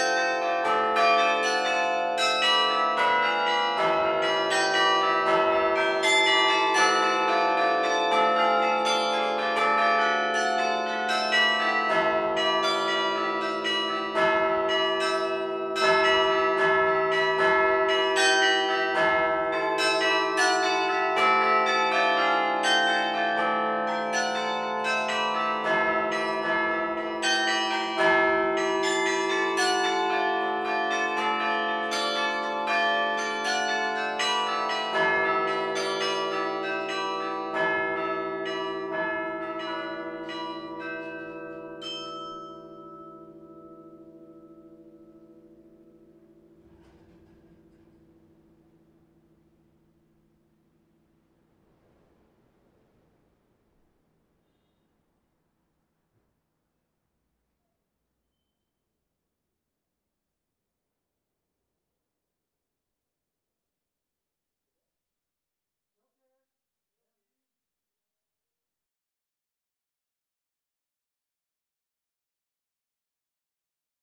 Les Pinces, Pl. de la République, Tourcoing, France - Église St-Christophe - Tourcoing - Carillon
Église St-Christophe - Tourcoing
Carillon
Maitre carillonneur : Mr Michel Goddefroy